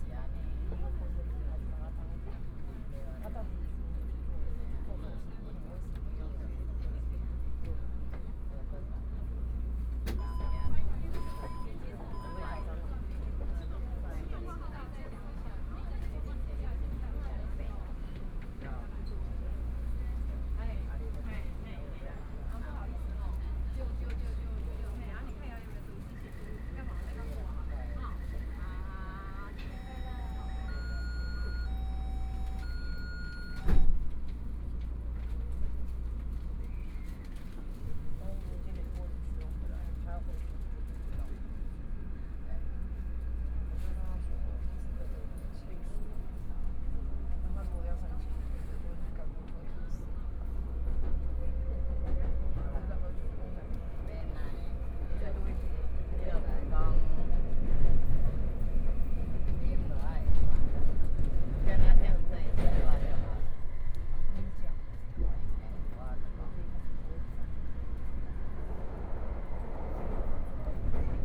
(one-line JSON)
{"title": "Beitou, Taipei City - Tamsui Line (Taipei Metro)", "date": "2014-01-21 18:17:00", "description": "from Qiyan Station to Fuxinggang Station, Binaural recordings, Zoom H4n+ Soundman OKM II", "latitude": "25.13", "longitude": "121.50", "timezone": "Asia/Taipei"}